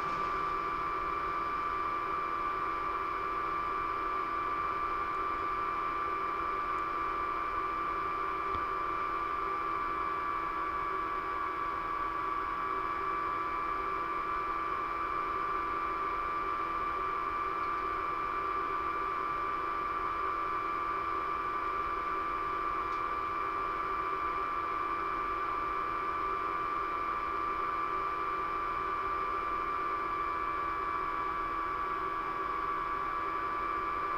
Post Box, Malton, UK - the defibrillator in the telephone box ...
the defibrillator in the telephone kiosk ... pair of j r french contact mics to olympus ls 14 ...